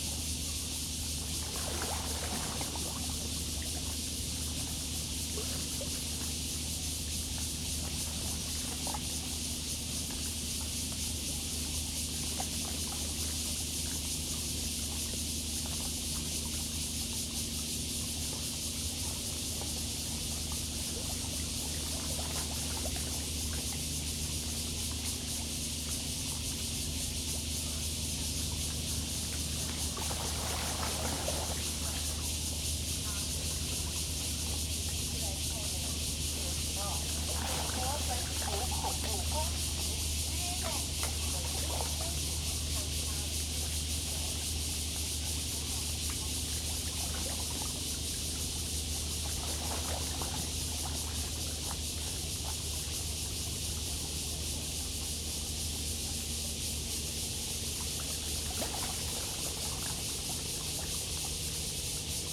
Ln., Zhongzheng Rd., Tamsui Dist. - On the river bank
On the river bank, Acoustic wave water, Cicadas cry, There are boats on the river
Zoom H2n MS+XY